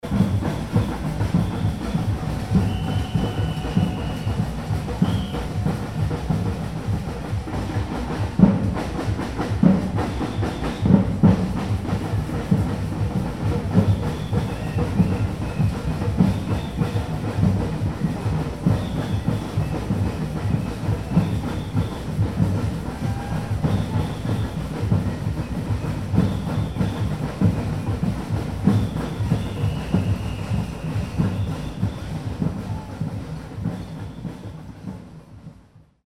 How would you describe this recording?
Summer Carnival in Fuzine. You can hear a bell ringers on this recording.